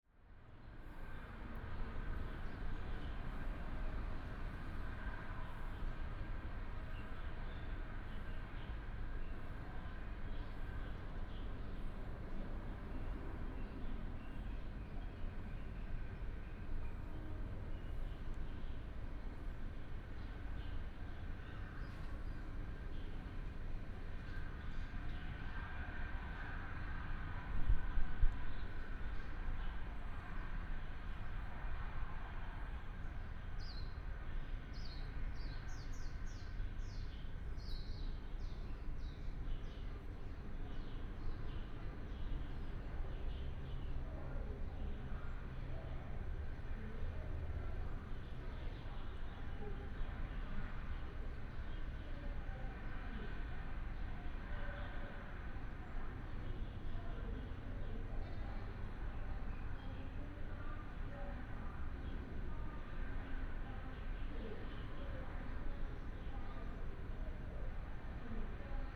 Tongxiao Station, 苗栗縣通霄鎮 - At the station platform
At the station platform, Train arrived
24 March 2017, 12:05